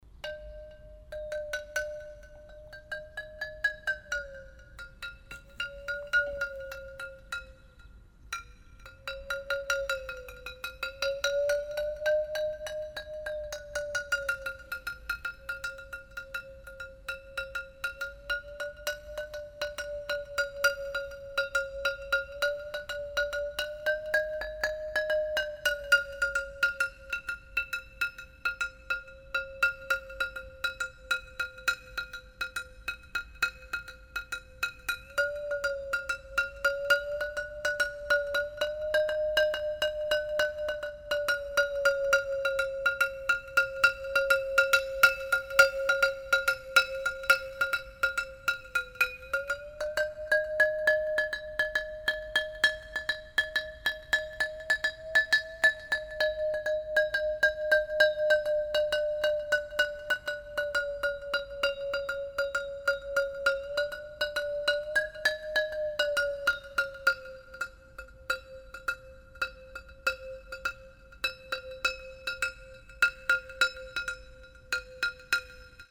wasserorchester, tauch chime
H2Orchester des Mobilen Musik Museums - Instrument Tauch Chime - temporärer Standort - VW Autostadt
weitere Informationen unter